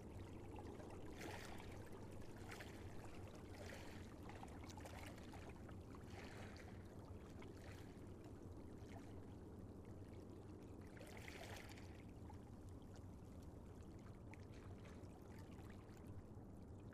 Håkonshellaveien, Mathopen, Norge - Ships and waves
A late afternoon in Vatlestraumen on the outskirt of Bergen, Norway.
There was next to no wind for the first time i ages, so I ran out to do some recording of a narrow fjord.
Vatlestraumen is a busy route in and out of Bergen harbor, so you can hear both smaller crafts, and bigger ships in this relatively short recording.
There is also a nearby airport, and a bridge.
You can also hear some birds in the background
Recorder: Zoom H6
Mic: 2x Røde M5 MP in Wide Stereo close to the water
Normalized to -7.0 dB in post
20 March, 20:15